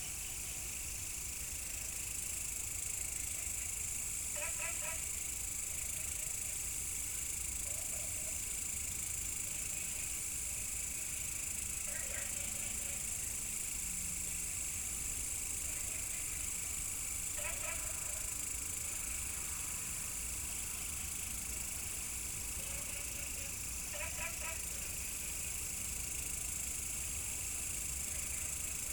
青蛙ㄚ婆ㄟ家, 桃米里, Puli Township - Insect sounds
Insect sounds, Frog calls, Traffic Sound